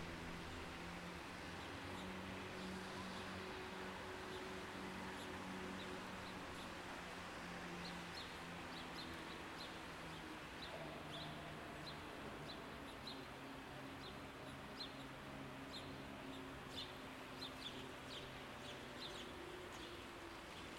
stuttgart, entrance kunstverein
in front of the entrance of the stuttgart kunstverein